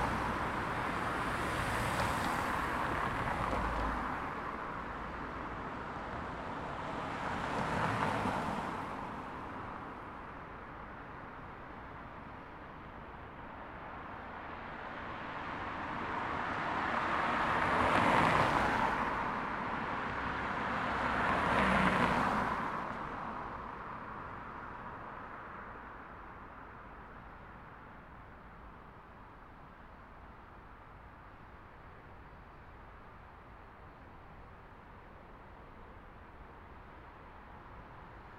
Antwerpsesteenweg, Gent, België - N70 Traffic
[Zoom H4n Pro]
Gent, Belgium, 3 February, 17:48